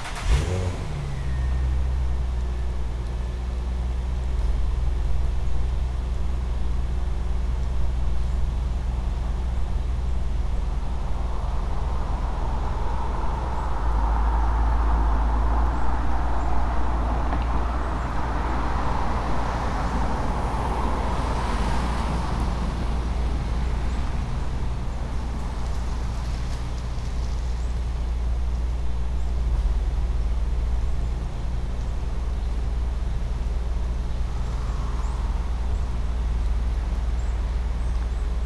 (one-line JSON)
{"title": "Suffex Green Ln NW, Atlanta, GA, USA - Recording at a Neighborhood Picnic Table", "date": "2019-12-24 15:10:00", "description": "This recording features sounds from my street as heard from a neighborhood picnic table. The table itself is located in a woodsy area central to multiple sets of apartments. I've recorded here before, but I never quite realized how many different sounds occur in my own neighborhood. This recording was done with my new Tascam Dr-100mkiii and a dead cat wind muff.", "latitude": "33.85", "longitude": "-84.48", "altitude": "293", "timezone": "America/New_York"}